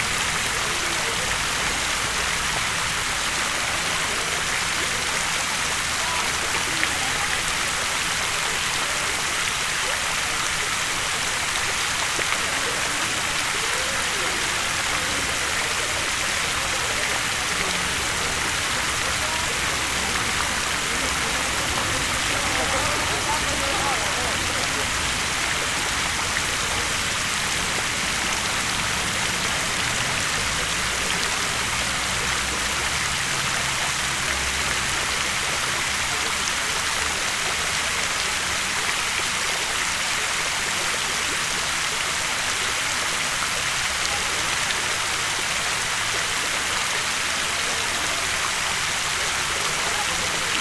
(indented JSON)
{
  "title": "Vokiečių g., Vilnius, Lithuania - A Fountain",
  "date": "2021-05-09 21:26:00",
  "description": "A fountain in the middle of Vokiečių street, Vilnius. Through the constant noise of the fountain, chatter of a group of people and other noises can be heard nearby. Recorded with ZOOM H5.",
  "latitude": "54.68",
  "longitude": "25.28",
  "altitude": "114",
  "timezone": "Europe/Vilnius"
}